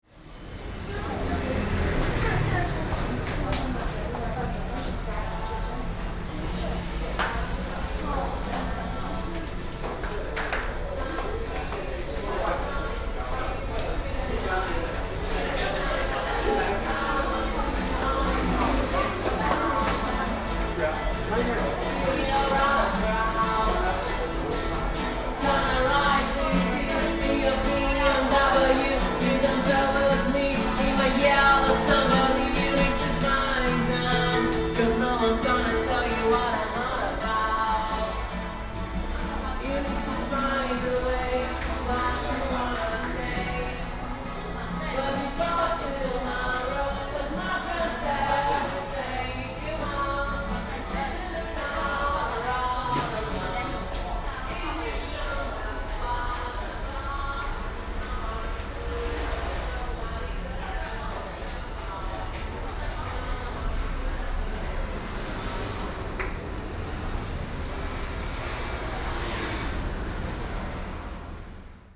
Walking in the underpass, Traffic Sound, Walk through the underpass
Sony PCM D50+ Soundman OKM II